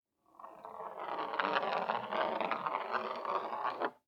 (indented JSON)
{
  "title": "Niederrieden, Deutschland - Table Tennis Ball across Table",
  "date": "2012-09-12 08:00:00",
  "description": "A table tennis ball rolling across table",
  "latitude": "48.05",
  "longitude": "10.20",
  "altitude": "654",
  "timezone": "Europe/Berlin"
}